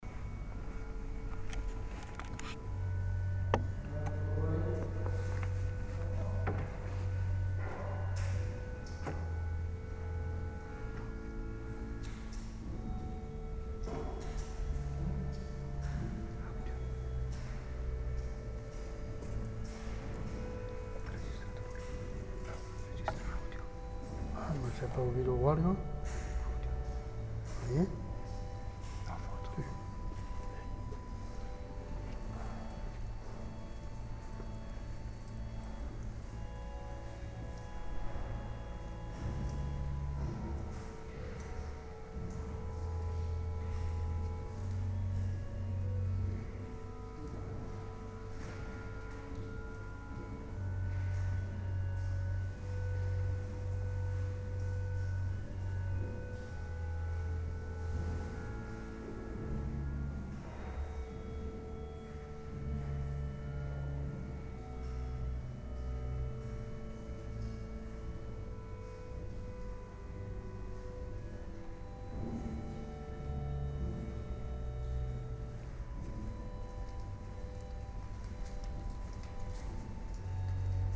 Braga, into the Sé
into the Sè, a musician plays the organ. A guardian is found what Im doing with an unidentified device.
Braga, Portugal, 29 July 2010, 15:39